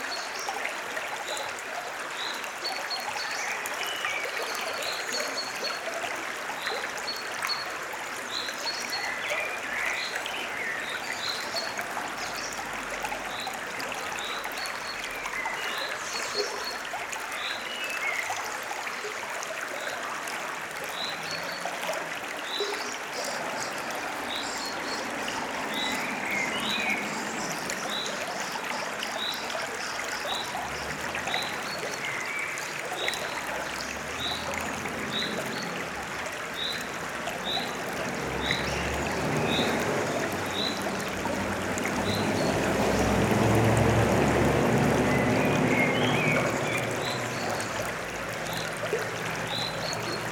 Klosterberg, Bad Berka, Deutschland - Beneath the Ilm Bridge #2
*Recording technique: ORTF inverted close to riverbank.
SOUND character: Palpable tones, forms, gestures and textures in sound. Dotted vocalizations of birds and occasional vehicular traffic.
The Ilm is a 128.7 kilometers (80.0 mi) long river in Thuringia, Germany. It is a left tributary of the Saale, into which it flows in Großheringen near Bad Kösen.
Towns along the Ilm are Ilmenau, Stadtilm, Kranichfeld, Bad Berka, Weimar, Apolda and Bad Sulza.
In the valley of Ilm river runs the federal motorway 87 from Ilmenau to Leipzig and two railways: the Thuringian Railway between Großheringen and Weimar and the Weimar–Kranichfeld railway. Part of the Nuremberg–Erfurt high-speed railway also runs through the upper part of the valley near Ilmenau.
*Recording and monitoring gear: Zoom F4 Field Recorder, RODE M5 MP, Beyerdynamic DT 770 PRO/ DT 1990 PRO.